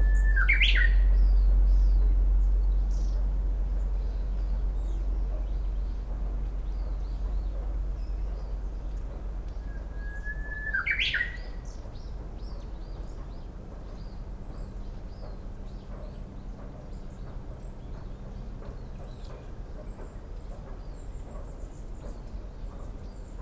Houhokekyo songbirds on Munyeo Island (Saemangeum Area) - Houhokekyo
Saemangeum Area was formally a large wetland that supported many types of migratory and other birds. Roading has reached out over the sea and connected these small islands to the Korean mainland. The area is being heavily industrialized, and much construction can be heard in the distance as this Houhokekyo makes communication calls.
Gunsan, Jeollabuk-do, South Korea